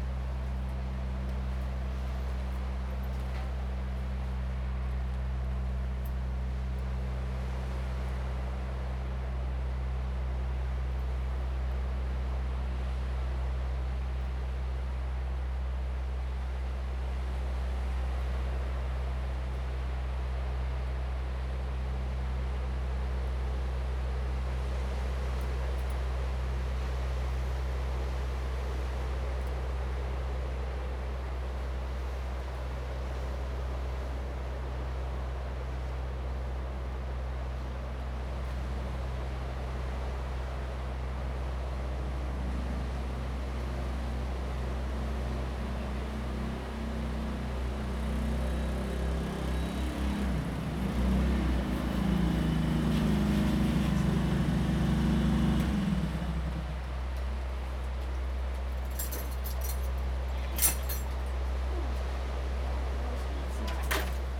Shihlang Diving Area, Lüdao Township - On the coast
On the coast, Sound of the waves
Zoom H2n MS +XY
Lyudao Township, Taitung County, Taiwan, October 2014